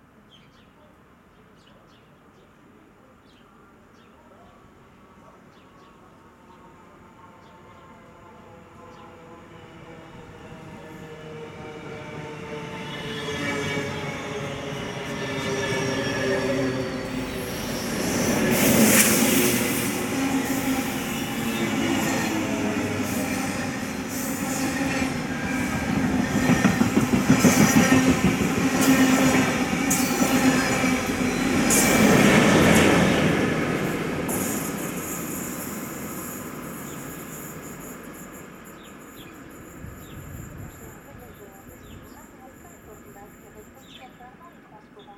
Gare de Waterloo, Pl. de la Gare, Waterloo, Belgique - Platform ambience at the station
Trains passing by, voice announcements.
Tech Note : Ambeo Smart Headset binaural → iPhone, listen with headphones.
Wallonie, België / Belgique / Belgien, 11 April 2022